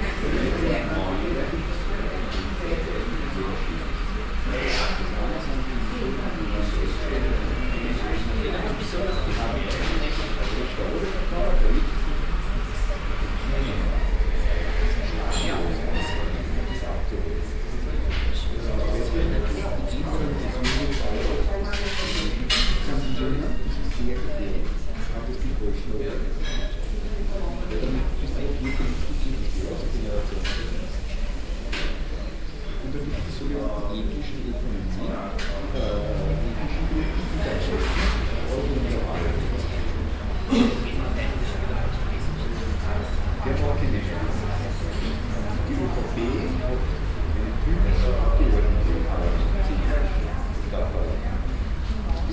vienna, josefstätterstrasse, coffee house - wien, josefstätterstrasse, cafe haus
cityscapes, recorded summer 2007, nearfield stereo recordings